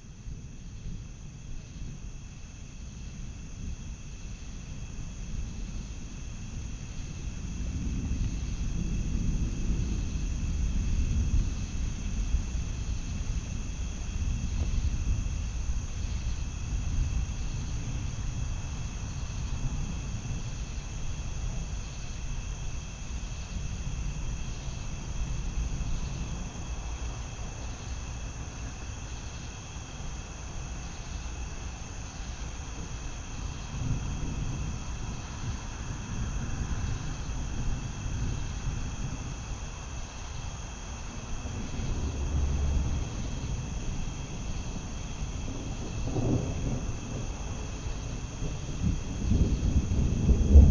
Upper Deerfield Township, NJ, USA - approaching thunderstorm
Approaching thunderstorm (good headphones or speakers needed to achieve base reproduction) with insects chanting and distant green frog gulping. Nearby road traffic. Lakeside recording.